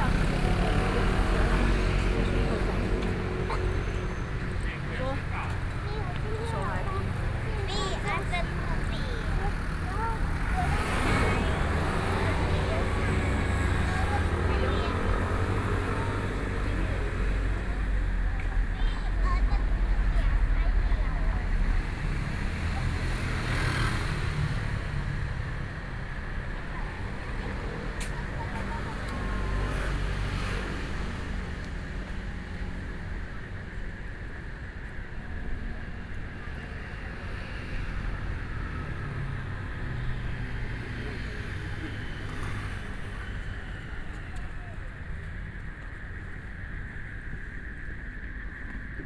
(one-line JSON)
{"title": "內湖區湖濱里, Taipei City - soundwalk", "date": "2014-03-19 19:15:00", "description": "Walking along the lake, People walking and running, Traffic Sound, Frogs sound\nBinaural recordings", "latitude": "25.08", "longitude": "121.58", "altitude": "10", "timezone": "Asia/Taipei"}